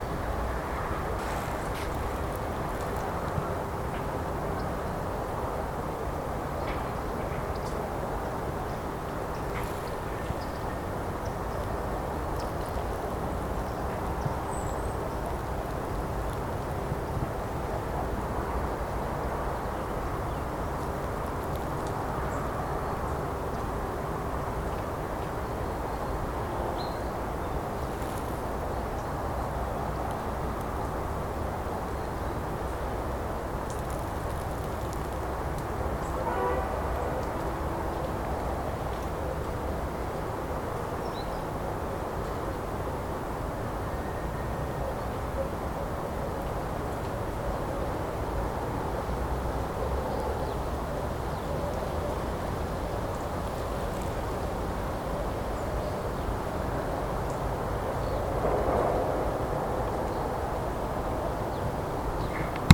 вулиця Шмідта, Костянтинівка, Донецька область, Украина - Утро над промзоной
Пение птиц и звуки производства